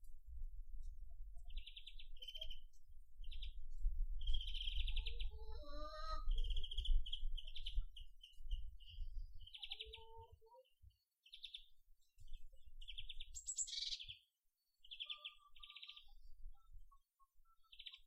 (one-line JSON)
{"title": "klaushagen, uckermark", "date": "2010-04-10 14:58:00", "description": "sounds on a farm", "latitude": "53.23", "longitude": "13.58", "altitude": "107", "timezone": "Europe/Berlin"}